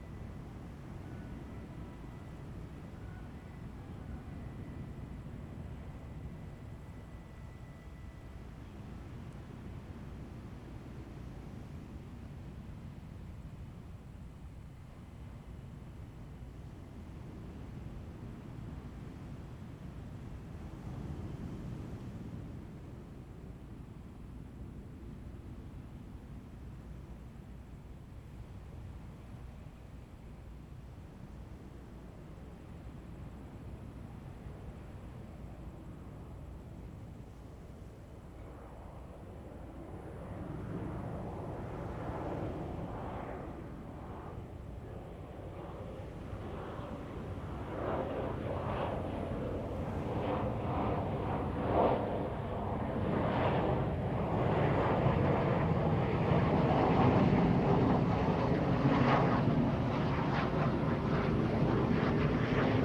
Waterfront Park, Beach at night, The sound of aircraft flying
Zoom H2n MS + XY
Taitung County, Taiwan